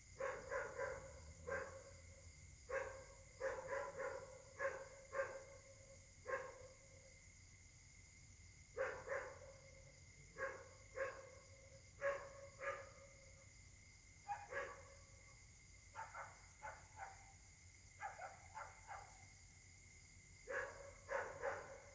{"title": "Caltex, mares, Réunion - 2019-01-20 23h08", "date": "2019-01-20 23:08:00", "description": "La nuit s'annonce difficile pour les habitants: concert de chiens.\nMicro: smartphone Samsung Galaxy s8, le micro de gauche tend à être encrassé. Essai pour voir si c'est acceptable.", "latitude": "-21.14", "longitude": "55.47", "altitude": "1214", "timezone": "Indian/Reunion"}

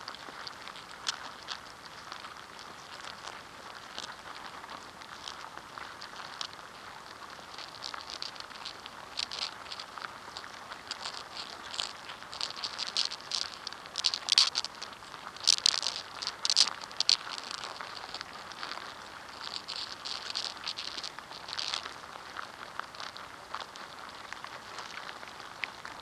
{"title": "Strömbäck-Kont Nature Reserve, Inside an ants nest", "date": "2011-04-27 11:28:00", "description": "Recording from inside an ant nest. Piezo mic. Mono.", "latitude": "63.68", "longitude": "20.23", "altitude": "4", "timezone": "Europe/Stockholm"}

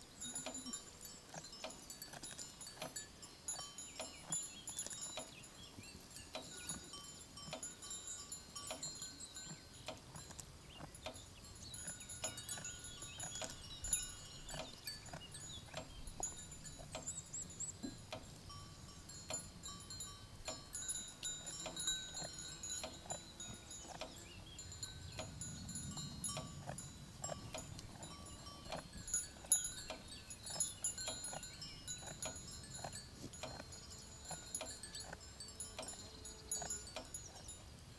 {"title": "Im Sackental - heima®t gerstetten sackental", "date": "2011-10-04 10:20:00", "description": "Nicht nur im Goißatäle, sondern auch im Sackental zwischen Sontbergen und Gerstetten kann man die wiederkäuenden Hornträger antreffen.\nheima®t - eine klangreise durch das stauferland, helfensteiner land und die region alb-donau", "latitude": "48.61", "longitude": "9.99", "altitude": "578", "timezone": "Europe/Berlin"}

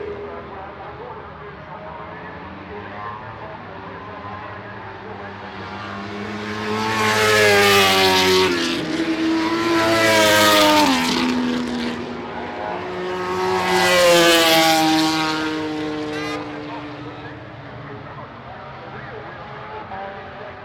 {"title": "Unnamed Road, Derby, UK - British Motorcycle Grand Prix 2004 ... warm up ...", "date": "2004-07-25 10:10:00", "description": "British Motorcycle Grand Prix 2004 ... warm up ... one point mic to minidisk ...", "latitude": "52.83", "longitude": "-1.37", "altitude": "74", "timezone": "Europe/London"}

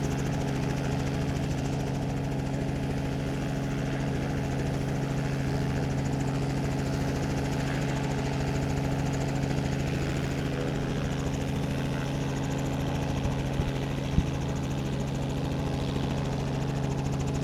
{"title": "S East St, Indianapolis, IN, United States - Helicopter Takeoff", "date": "2019-04-22 21:05:00", "description": "Helicopter preparing for takeoff and then taking off and hovering before flying away. Recorded at the Indianapolis Downtown Heliport on April 22, 2019 at around 9:00 pm.", "latitude": "39.76", "longitude": "-86.15", "altitude": "221", "timezone": "America/Indiana/Indianapolis"}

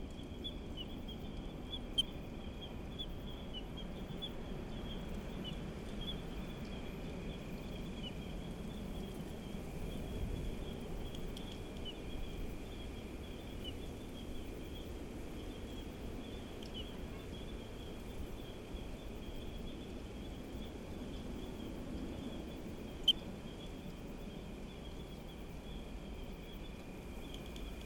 {"title": "Night cicadas 7500 Santiago do Cacém, Portugal - Night cicadas", "date": "2020-09-25 23:35:00", "description": "Night cicadas and other creatures of the night, trees cracking on wind and the ocean nearby. Recorded with a SD mixpre6 and a pair of primos 172 in AB stereo configuration.", "latitude": "38.11", "longitude": "-8.79", "altitude": "21", "timezone": "Europe/Lisbon"}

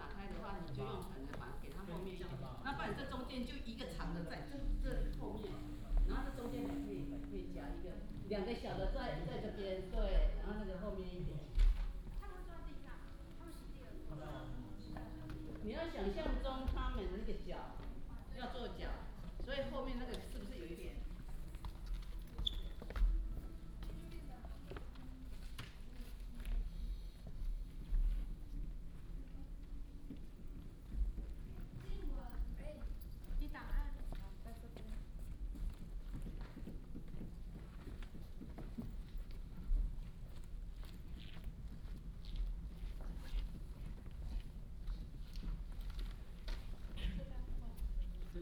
{"title": "Cloud Gate Theater, New Taipei City - Rehearsed speech", "date": "2016-06-04 16:18:00", "description": "Rehearsed speech\nBinaural recordings\nSony PCM D100+ Soundman OKM II", "latitude": "25.18", "longitude": "121.43", "altitude": "36", "timezone": "Asia/Taipei"}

